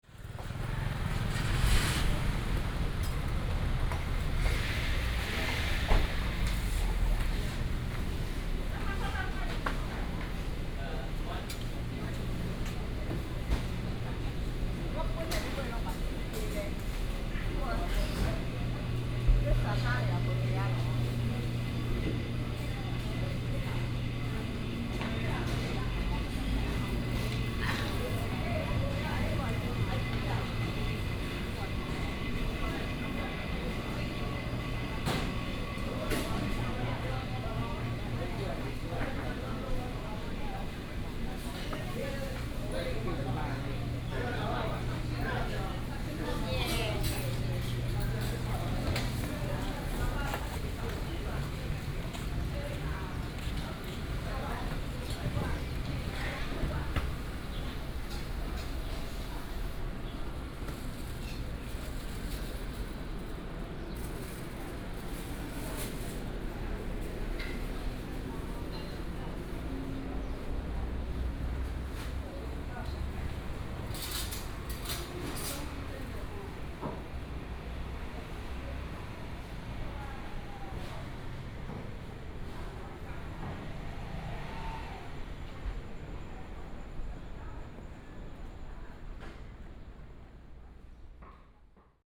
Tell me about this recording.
Walking in the traditional market, traffic sound, Binaural recordings, Sony PCM D100+ Soundman OKM II